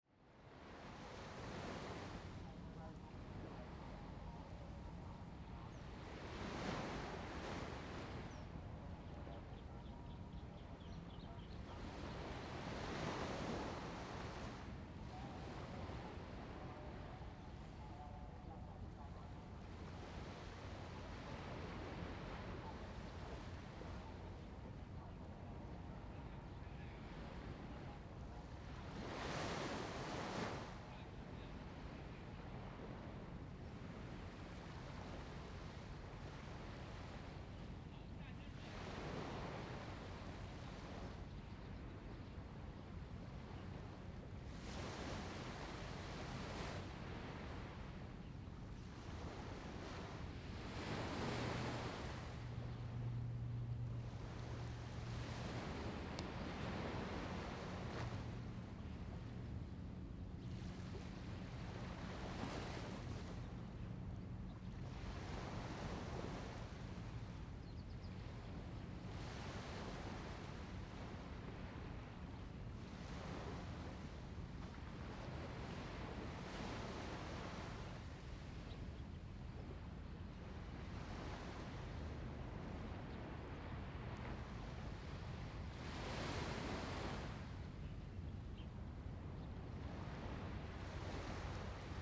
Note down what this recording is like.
In the beach, Sound of the waves, Zoom H2n MS +XY